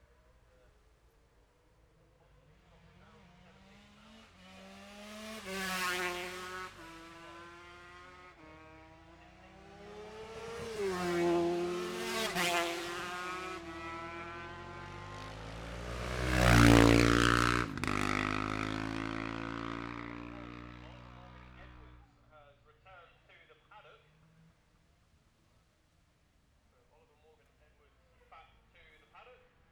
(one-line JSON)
{"title": "Jacksons Ln, Scarborough, UK - gold cup 2022 ... lightweight and 650 twins qualifying ......", "date": "2022-09-16 13:54:00", "description": "the steve henshaw gold cup 2022 ... lightweight and 650 twins qualifying ... dpa 4060s on t-bar on tripod to zoom h5 ...", "latitude": "54.27", "longitude": "-0.41", "altitude": "144", "timezone": "Europe/London"}